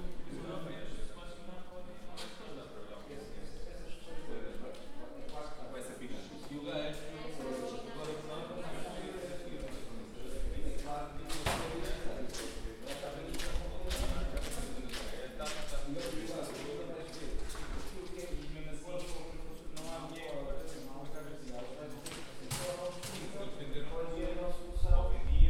Nossa Senhora do Pópulo, Portugal - Átrio ESAD.CR
Recorded with TASCAM DR40